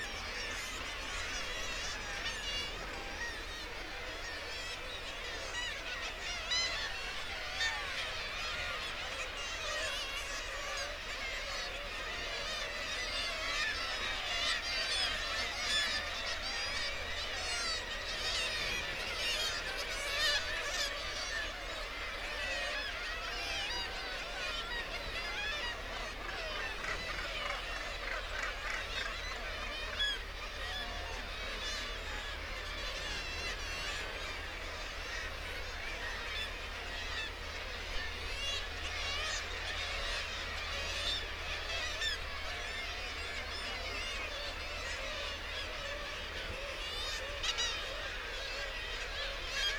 Bempton, UK - Kittiwake soundscape ...
Kittiwake soundscape ... RSPB Bempton Cliffs ... kittiwake calls and flight calls ... guillemot and gannet calls ... open lavalier mics on the end of a fishing landing net pole ... warm ... sunny morning ...
Bridlington, UK, 22 July 2016